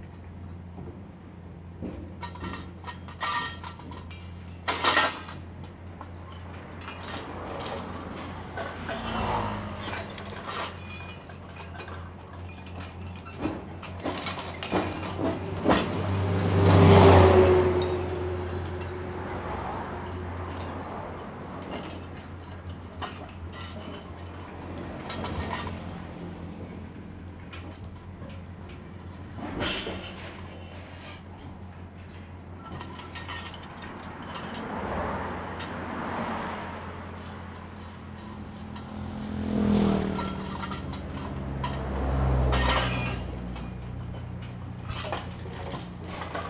多良村, Taimali Township - Road Construction
Road Construction, traffic sound, The weather is very hot
Zoom H2n MS +XY